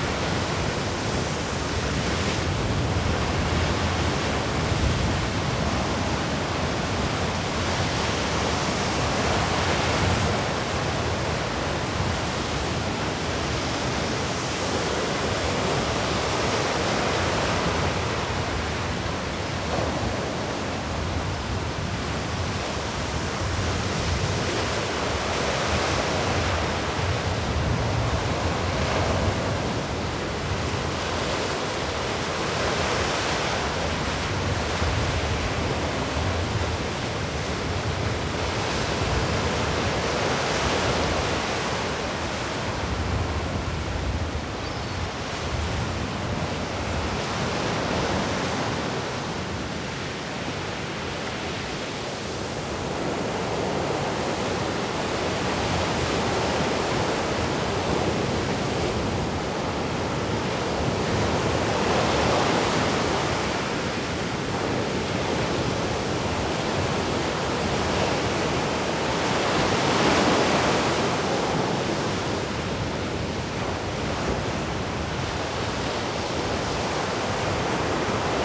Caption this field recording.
a short walk on the windy beach. muscular waves slash at the sand. many terns sitting around, crying out occasionally. two anglers shouting to each other. wind shredding the words, they finally let it slide as the wind is too strong to communicate.